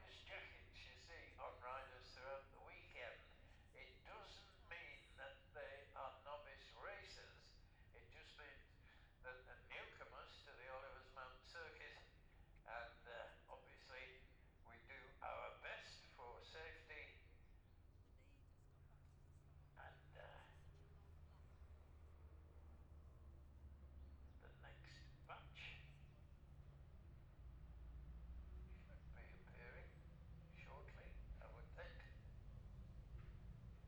Jacksons Ln, Scarborough, UK - goldcup 2022 ... pre-race ... newcomers laps ...
the steve hensaw gold cup 2022 ... pre race ... newcomers laps ... dpa 4060s on t-bar on tripod to zoom f6 ...